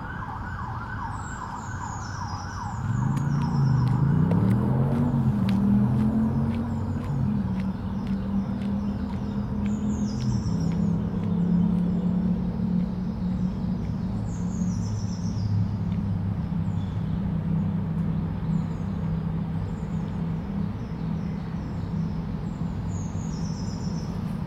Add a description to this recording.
This was recorded at about 07:20. It includes the sounds of nature, an emergency service vehicle travelling towards Trafalgar Square along The Mall, a rather impressive motor bike and various joggers and walkers.